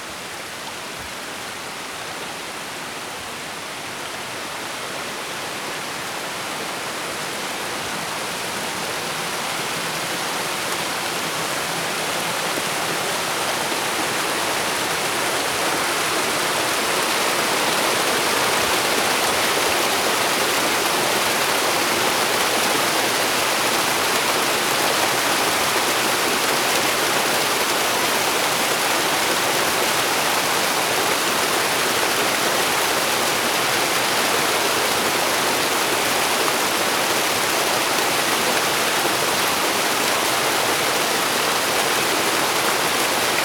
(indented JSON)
{
  "title": "Feldberg, Feldsee - up the stream",
  "date": "2014-09-10 10:48:00",
  "description": "walking up the hill, following intricate stream, spread onto many smaller veins. finally approaching a place where the water is really gushing.",
  "latitude": "47.87",
  "longitude": "8.03",
  "altitude": "1175",
  "timezone": "Europe/Berlin"
}